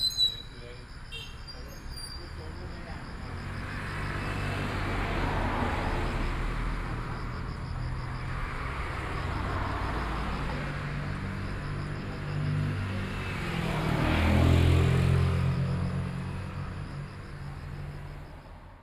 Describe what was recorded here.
Bird call, Insect sounds, traffic sound, An old couple in the next little temple, Binaural recordings, Sony PCM D100+ Soundman OKM II